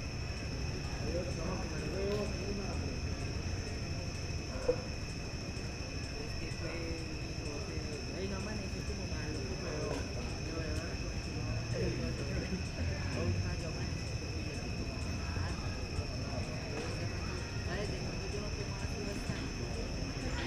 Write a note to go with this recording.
Toma de audio / Paisaje sonoro del parque Los Alpes grabada con la grabadora Zoom H6 y el micrófono XY a 120° de apertura en horas de la noche. Se pueden escuchar algunas personas hablando, los sonidos de la naturaleza de manera tenue, la música de un parlante que se encontraba a unos metros del punto de grabación y el silbido de una persona llamando a su perro en algunas ocasiones. Sonido tónico: Naturaleza y personas hablando, Señal sonora: Silbido